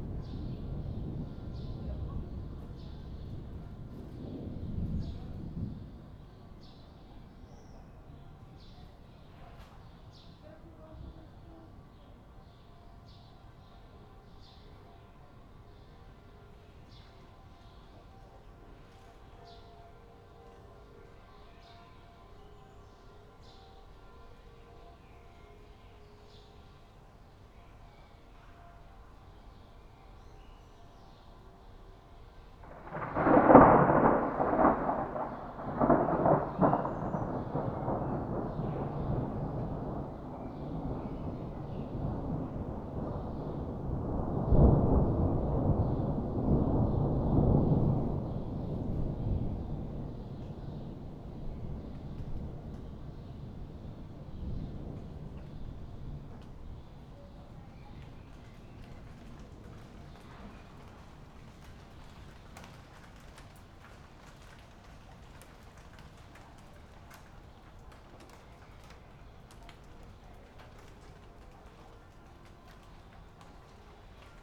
thunder approaches, it starts to rain.
(Sony PCM D50)